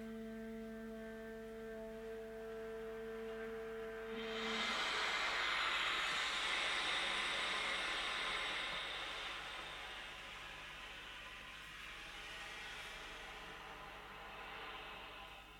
private concert, nov 22, 2007 - Köln, private concert, nov 22, 2007
excerpt from a private concert. playing: dirk raulf, sax - thomas heberer, tp - matthias muche, trb